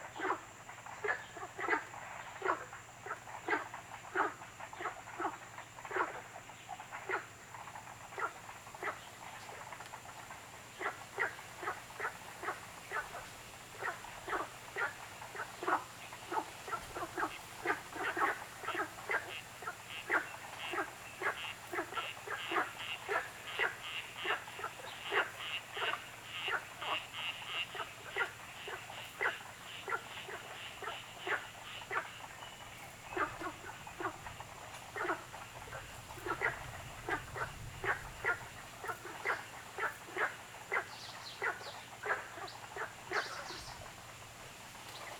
草湳, 桃米里 Puli Township - Ecological pool
Frogs chirping, Birds called
Zoom H2n MS+ XY
Nantou County, Taiwan, 3 May 2016, ~17:00